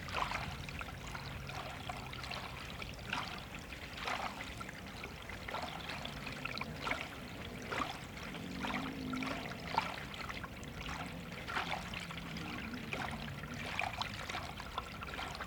Horní Jiřetín, Czech Republic - Water in plastic pipe
A diverted stream?